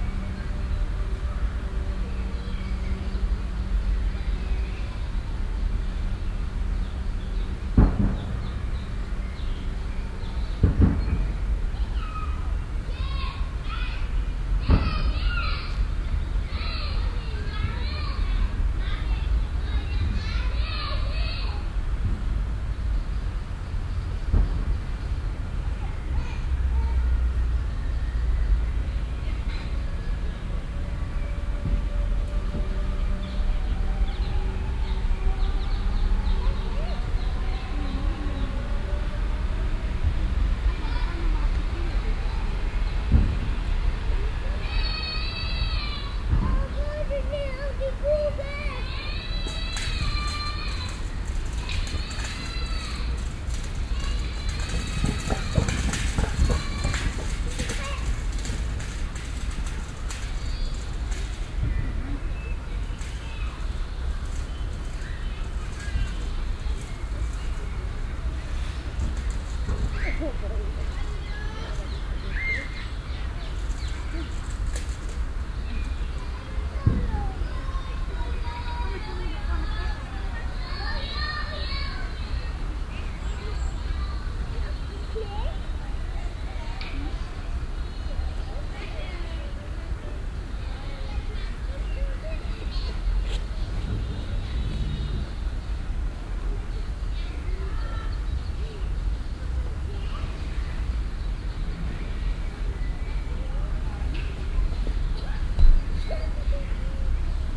cologne stadtgarten, kinderspielplatz platz - cologne, stadtgarten, kinderspielplatz platz 2
klang raum garten - field recordings
May 2008